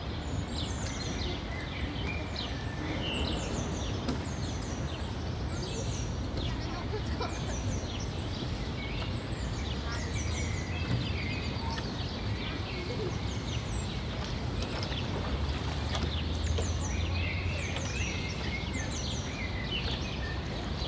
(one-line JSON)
{"title": "Großer Tiergarten, Straße des 17. Juni, Berlin - Berlin. Tiergarten – Am Neuen See", "date": "2012-04-26 11:30:00", "description": "Standort: Bootssteg. Blick Richtung Nordwest.\nKurzbeschreibung: Café-Gäste, Vogelgezwitscher, Reinigung von Booten und Steg, Ausflügler.\nField Recording für die Publikation von Gerhard Paul, Ralph Schock (Hg.) (2013): Sound des Jahrhunderts. Geräusche, Töne, Stimmen - 1889 bis heute (Buch, DVD). Bonn: Bundeszentrale für politische Bildung. ISBN: 978-3-8389-7096-7", "latitude": "52.51", "longitude": "13.34", "timezone": "Europe/Berlin"}